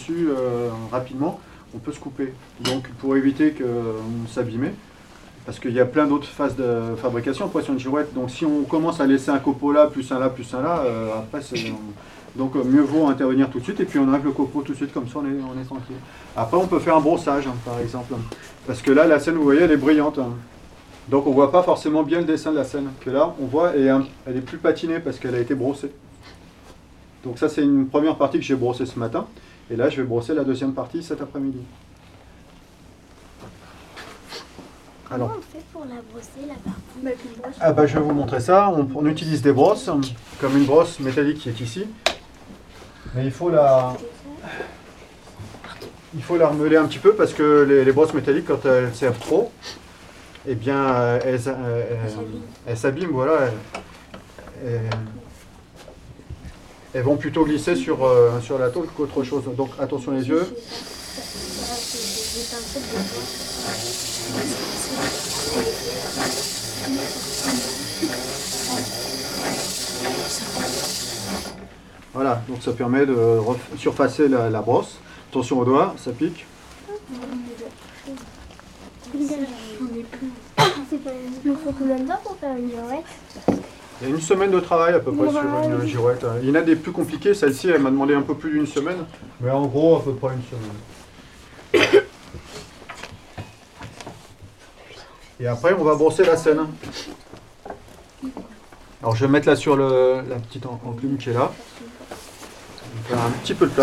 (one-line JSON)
{"title": "Le Bourg, Le Mage, France - Girouettier", "date": "2014-02-06 14:33:00", "description": "Enregistrement dans l'atelier de Thierry Soret, Girouettier, Le Mage dans l'Orne. Dans le cadre de l'atelier \"Ecouter ici ) ) )\". Enregistreur Zoom H6 et paire de micros Neumann KM140.", "latitude": "48.51", "longitude": "0.80", "timezone": "Europe/Paris"}